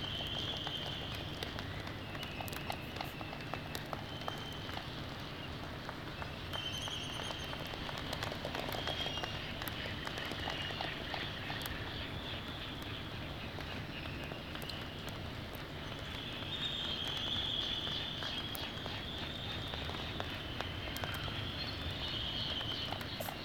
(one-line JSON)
{"title": "United States Minor Outlying Islands - laysan albatross soundscape ...", "date": "1997-12-19 05:00:00", "description": "Charlie Barracks ... Sand Island ... Midway Atoll ... dark and drizzling ... mic is 3m from male on nest ... the birds whinny ... sky moo ... groan ... clapper their bills ... sounds from white terns and black-footed albatross ... bonin petrels ... Sony ECM 959 one point stereo mic to Sony minidisk ...", "latitude": "28.22", "longitude": "-177.38", "altitude": "14", "timezone": "Pacific/Midway"}